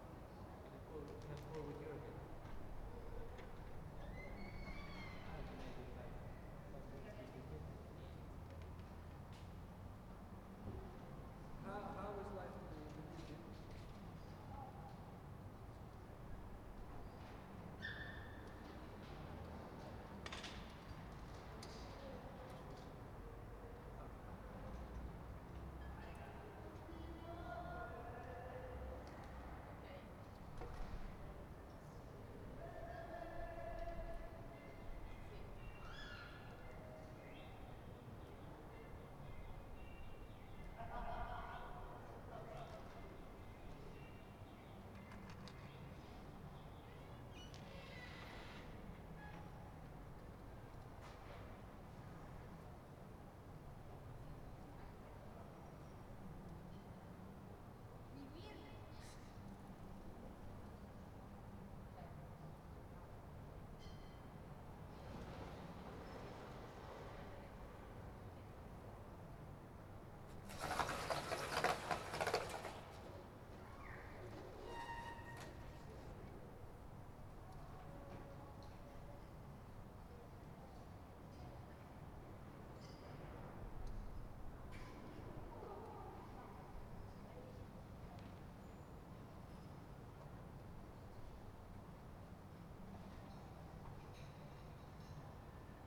Ascolto il tuo cuore, città. I listen to your heart, city. Several chapters **SCROLL DOWN FOR ALL RECORDINGS** - Afternoon with bell and strange buzz in the time of COVID19 Soundscape
"Afternoon with bell and strange buzz in the time of COVID19" Soundscape
Chapter LIII of Ascolto il tuo cuore, città. I listen to your heart, city.
Wednesday April 22nd 2020. Fixed position on an internal terrace at San Salvario district Turin, forty three days after emergency disposition due to the epidemic of COVID19.
Start at 4:13 p.m. end at 5:09 p.m. duration of recording 55’44”.
Piemonte, Italia